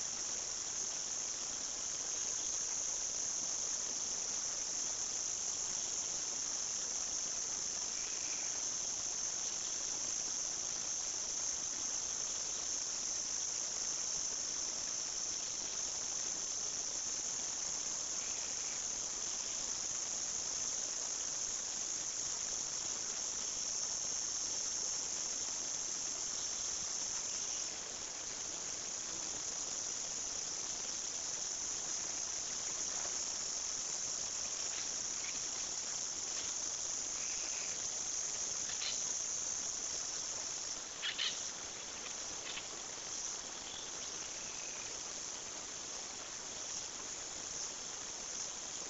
{"title": "Hato Corozal, Casanare, Colombia - Wind, birds", "date": "2012-04-15 07:32:00", "description": "Recording oever a hill-", "latitude": "6.03", "longitude": "-71.94", "altitude": "615", "timezone": "America/Bogota"}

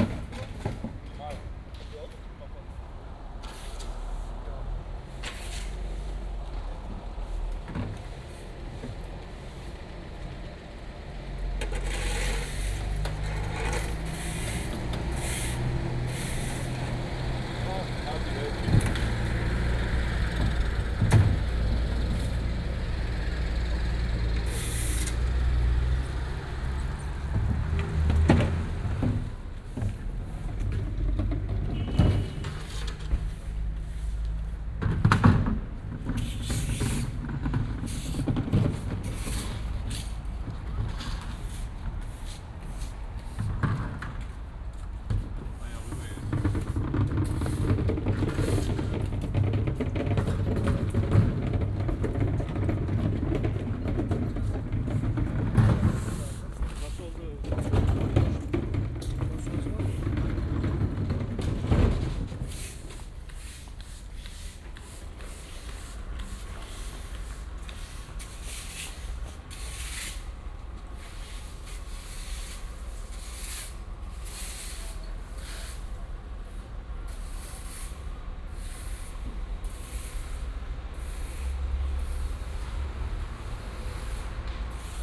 {"title": "Maybachufer, weekly market - cleanup after market (2)", "date": "2008-09-09 20:00:00", "description": "09.09.2008 20:00\ncleanup, worker complains about shitty job.", "latitude": "52.49", "longitude": "13.42", "altitude": "38", "timezone": "Europe/Berlin"}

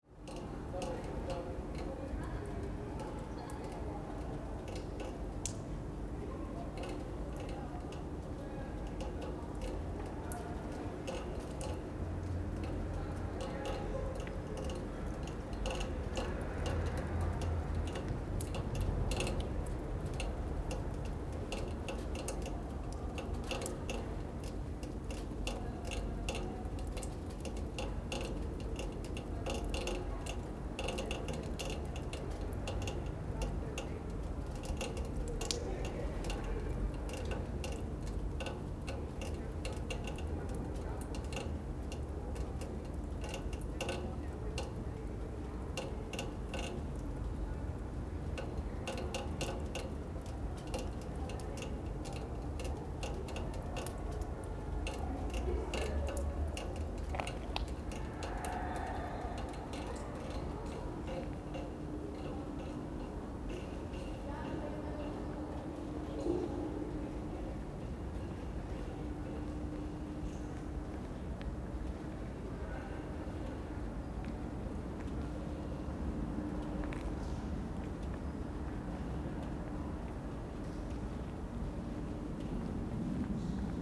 {"title": "Ritterstraße, Berlin, Germany - Lockdown Aquahof, empty except for dripping pipes", "date": "2020-11-01 16:23:00", "description": "Quiet in the small old-style Hinterhof Aquahof. Everything is closed. It has recently rained and the drain pipes are still dripping. Sound sometimes filters in from outside. I am the only one there. My footsteps are barely audible.", "latitude": "52.50", "longitude": "13.41", "altitude": "36", "timezone": "Europe/Berlin"}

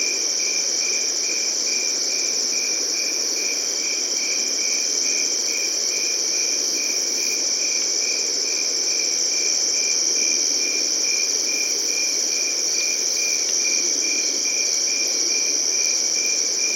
{"title": "The Funny Farm, Meaford, ON, Canada - Late night summer insects", "date": "2016-07-27 23:30:00", "description": "Crickets and grasshoppers in the wheat fields. Telinga stereo parabolic mic with Tascam DR-680mkII recorder.", "latitude": "44.54", "longitude": "-80.65", "altitude": "303", "timezone": "America/Toronto"}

